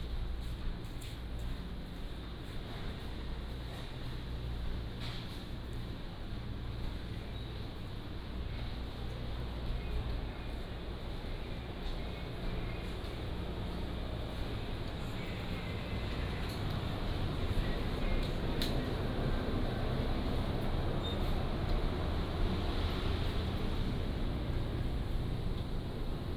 清水第一零售市場, Qingshui Dist., Taichung City - Walking in the indoor market
Walking in the indoor market